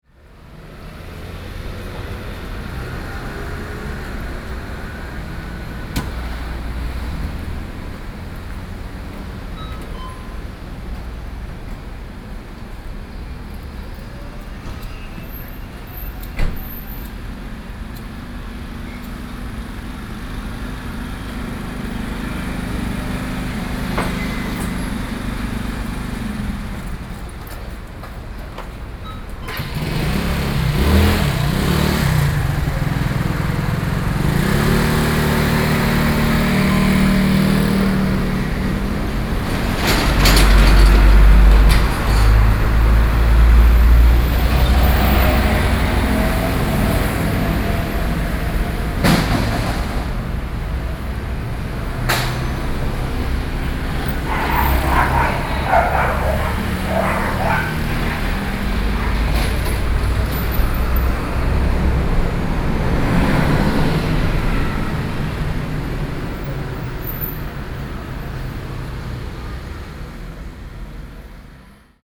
wugu, New Taipei City - Traffic noise
in front of the store, The sound of the store automatic doors, The sound of cars coming and going, Binaural recordings